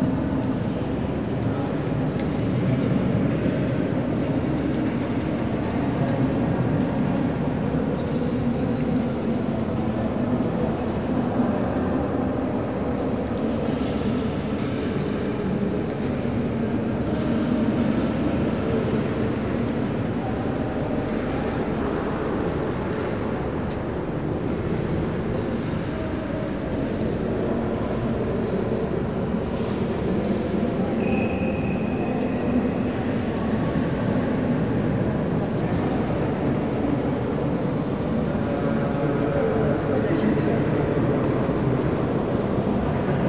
Rue Joseph Lakanal, Toulouse, France - The Jacobins
The Jacobins, a Dominican monastery built in 1229, is an exceptional testimony to southern gothic design. This brick architecture uses the same principles developed for the cathedrals of the kingdom of France.
massive huge reverb captation : Zoom H4n
5 June 2021, 16:00